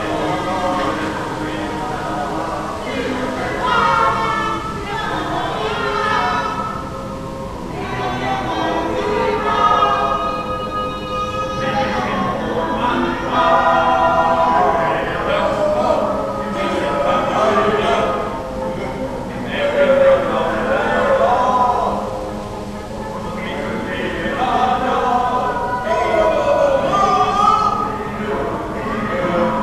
Neustadt-Nord, Cologne, Germany - Neighbours singing
Neighbours singing in the backyard.
21 June, 23:14